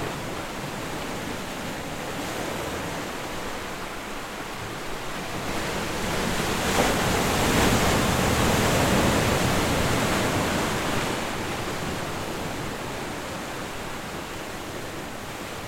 Mirakontxa, San Sebastián, Gipuzkoa, Espagne - The Ocean
the ocean
Captation ZOOMH6